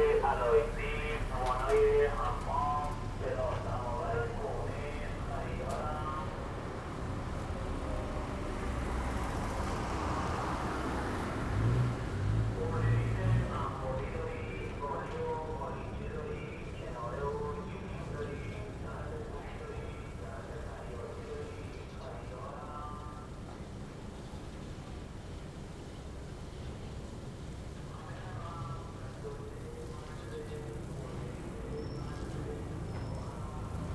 Announcement from passing scrap dealer